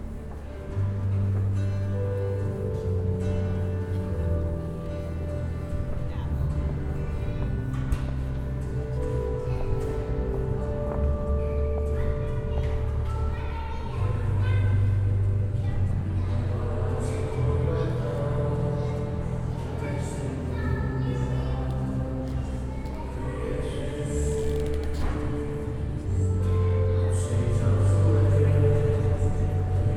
people celebrating mass on sunday. strange choir singing softly...
Tallinn, Estonia, 17 April 2011, ~14:00